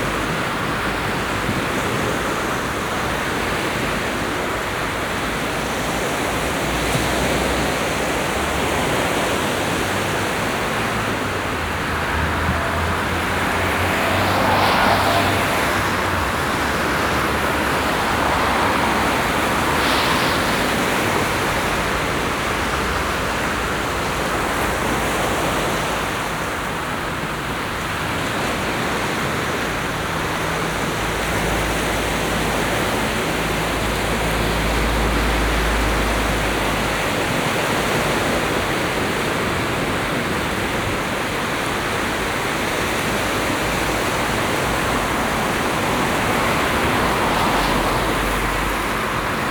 Scarborough, UK - Autumn, South Bay, Scarborough, UK
Binaural field recording part of a set which seeks to revel seasonal morphology of multiple locations within Scarborough.